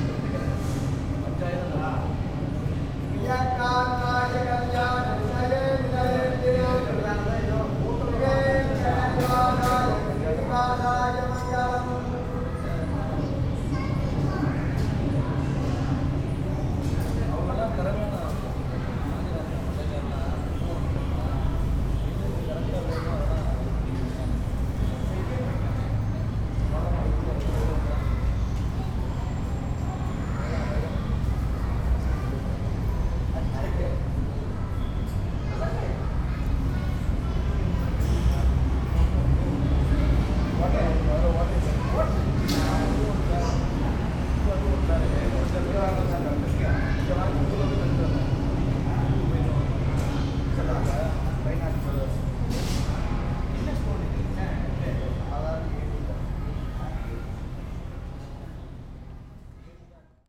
Kallang, Singapur - drone log 17/02/2013 b
Sri Srinivasa Perumal-Temple
(zoom h2, build in mic)
Singapore, 17 February 2013